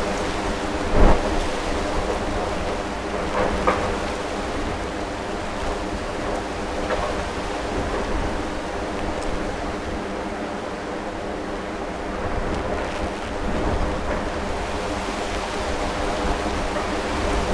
Court-St.-Étienne, Belgique - Wind !!
A very powerful wind, getting around a huge auvent. The wind was so strong that it was hard to stay standing.